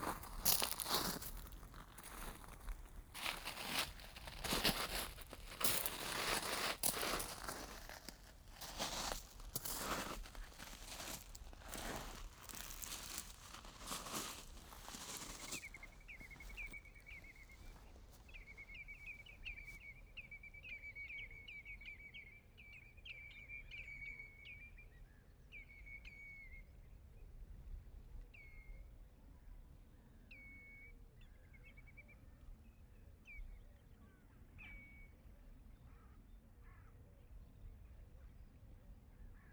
Port Carlisle, Cumbria, UK - Island Walk
walking over shingle beach on the "island" - the old harbour wall of Port Carlisle.
Many seabirds in background. ST350 mic. Binaural decode.
England, United Kingdom, European Union, 24 April 2013